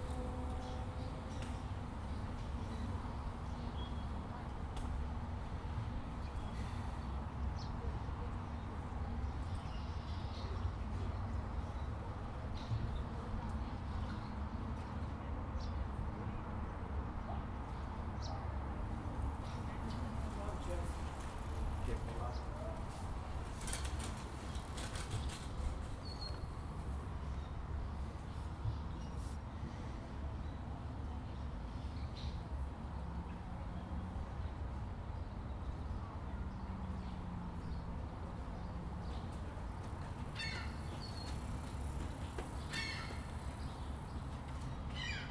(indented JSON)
{
  "title": "Palace Pier Ct, Etobicoke, ON, Canada - Seagulls and Bikes Underneath Humber Bridge",
  "date": "2020-06-07 10:34:00",
  "description": "Recorded in the daytime under the bridge aiming at the water, mostly sounds of birds and wildlife along with the nearby highway. A few bikes, boats, and sea-doos passing by.\nRecorded on a Zoom H2N",
  "latitude": "43.63",
  "longitude": "-79.47",
  "altitude": "73",
  "timezone": "America/Toronto"
}